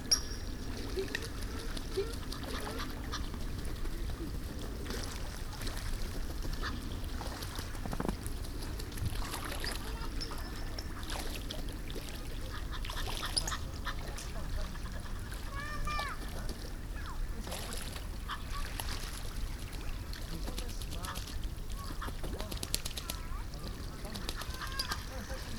Birds bathing in the only ice-free spot in the Hofvijfer. Binaural recording.
25 December 2010, 14:00